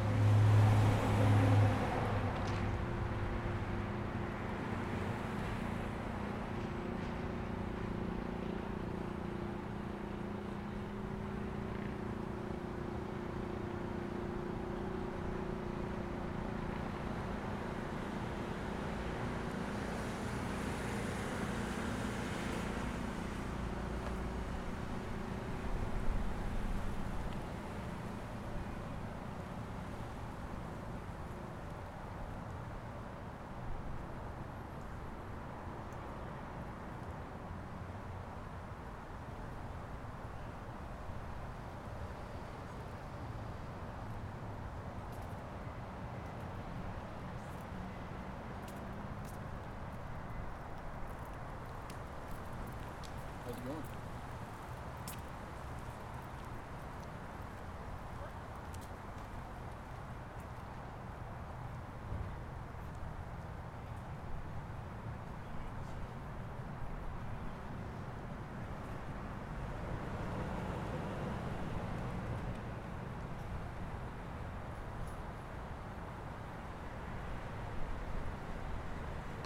{
  "title": "YMCA, N Tejon St, Colorado Springs, CO, USA - YMCA",
  "date": "2018-05-14 18:33:00",
  "description": "Outside the YMCA, cars and people walking by can be heard. Recorded with ZOOM H4N Pro with a dead cat.",
  "latitude": "38.84",
  "longitude": "-104.82",
  "altitude": "1837",
  "timezone": "America/Denver"
}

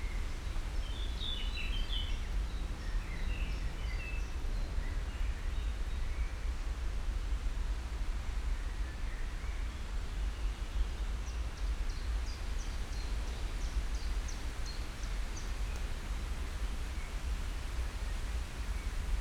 {
  "title": "Wuhletal, Marzahn, Berlin, Germany - ambience near BVG depot, wind",
  "date": "2015-05-23 17:50:00",
  "description": "along the river Wuhle valley (Wuhletal, Wind in trees and amience near BVG (Berlin public transport) depot.\n(SD702, DPA4060)",
  "latitude": "52.55",
  "longitude": "13.58",
  "altitude": "47",
  "timezone": "Europe/Berlin"
}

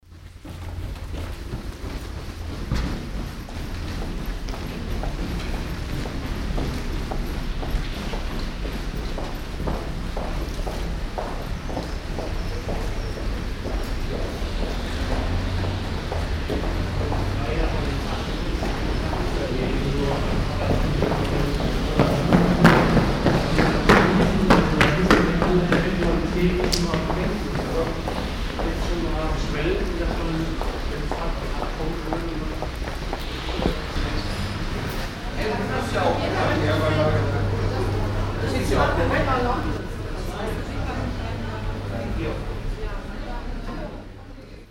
{
  "title": "mettmann, brücker str, fussgängertunnel",
  "description": "schritte in fussgängertunnel morgens\nsoundmap nrw: social ambiences/ listen to the people - in & outdoor nearfield recordings",
  "latitude": "51.25",
  "longitude": "6.98",
  "altitude": "138",
  "timezone": "GMT+1"
}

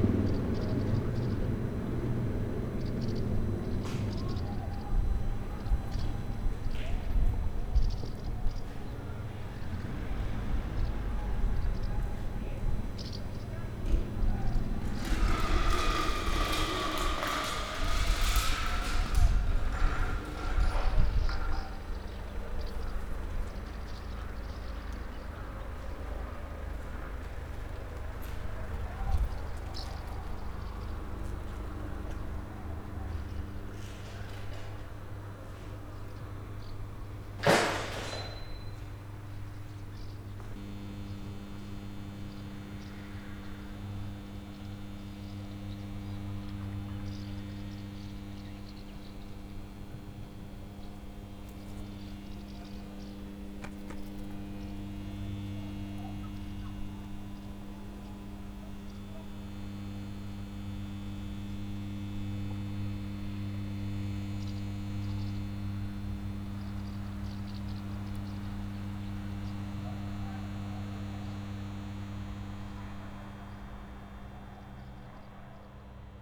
{
  "title": "Zátopkova, Praha, Czechia - Sunday at the Stadion",
  "date": "2020-07-12 18:35:00",
  "description": "Martins and ravens, electromagnetic resonance, shouting soccer players.. passing car.. summer sunday melancholy at the desolated empty Strahov stadion.",
  "latitude": "50.08",
  "longitude": "14.38",
  "altitude": "348",
  "timezone": "Europe/Prague"
}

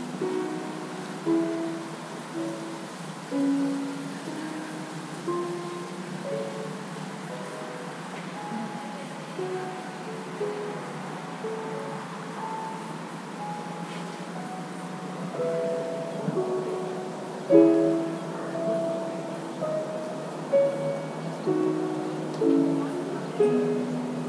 {
  "title": "Nida, Lithuania - Lutheran Church",
  "date": "2016-07-26 15:11:00",
  "description": "Recordist: Anita Černá\nDescription: Exterior of the Lutheran Church on a sunny day. Someone playing the piano inside, tourists walking, crickets and traffic in the distance. Recorded with ZOOM H2N Handy Recorder.",
  "latitude": "55.31",
  "longitude": "21.01",
  "altitude": "13",
  "timezone": "Europe/Vilnius"
}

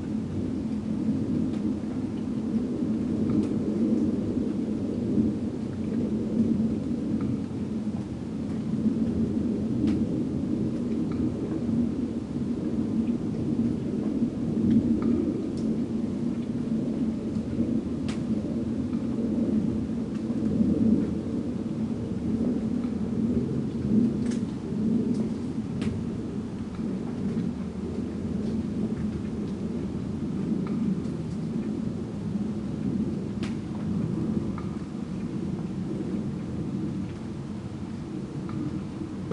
{
  "title": "TherapiaRd. London, UK - Therapia Drizzle",
  "date": "2016-09-05 03:00:00",
  "description": "Early morning drizzle at my windowsill. Recorded with a pair of DPA4060s and a Marantz PMD661.",
  "latitude": "51.45",
  "longitude": "-0.06",
  "timezone": "Europe/London"
}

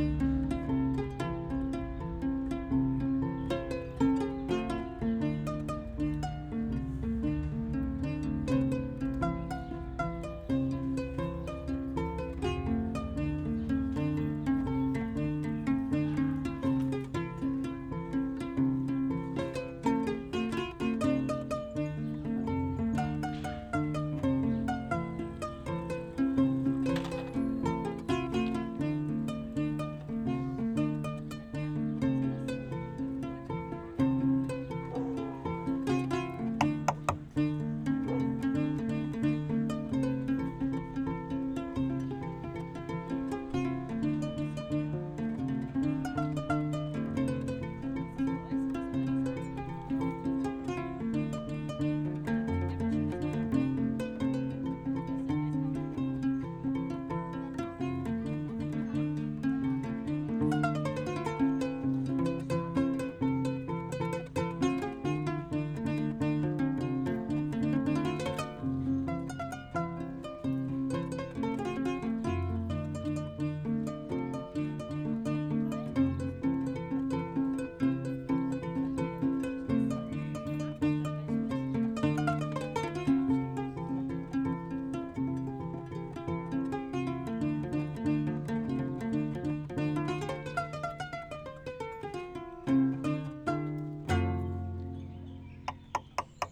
{
  "title": "Hasenheide, Berlin, Deutschland - Aba plays the Kora",
  "date": "2020-06-13 13:35:00",
  "description": "Berlin, Hasenheide Park, entrance area, Aba plays the Kora, a western african string instrument, while his kids are having a little fleemarket.\n(SD702, Audio Technica BP4025)",
  "latitude": "52.49",
  "longitude": "13.41",
  "altitude": "43",
  "timezone": "Europe/Berlin"
}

